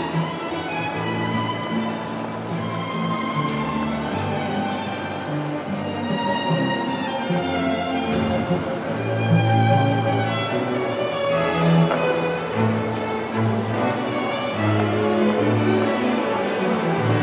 Passage, Den Haag
small orchestra performing